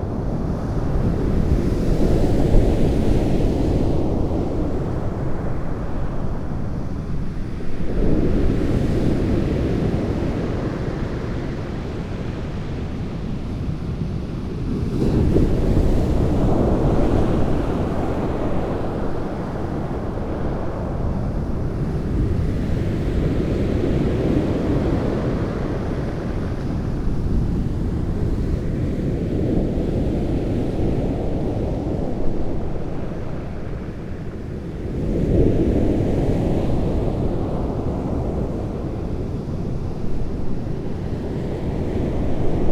A gusty morning over a receding tide on the shingle beach. This is another experiment with longer recordings.